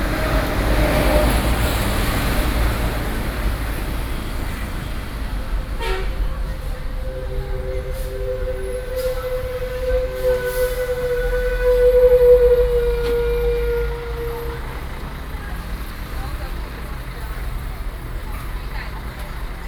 Fulong St., Gongliao Dist., New Taipei City - Traffic noise
traffic noise, Rode NT4+Zoom H4n